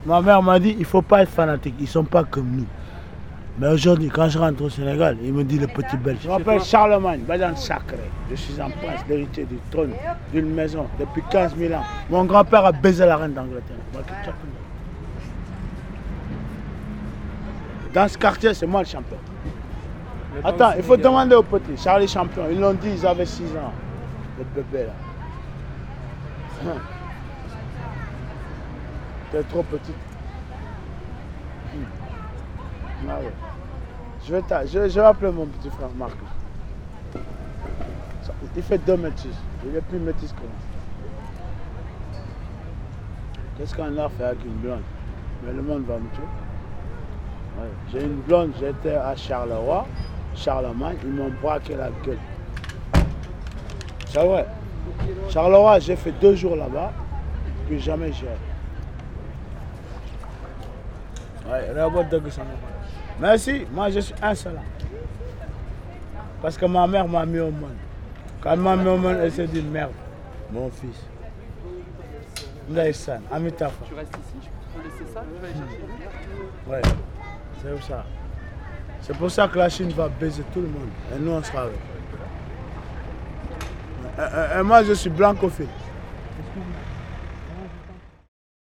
Brussels, Place de Moscou, Charlemagne, a homeless person.
SD-702, Rode NT4.
Saint-Gilles, Belgium, June 2011